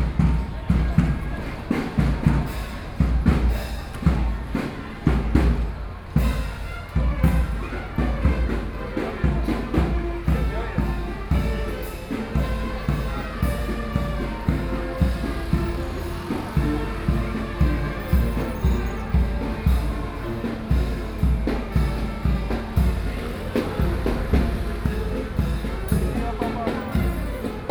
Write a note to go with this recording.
Road corner, Festival, Traffic Sound, Sony PCM D50+ Soundman OKM II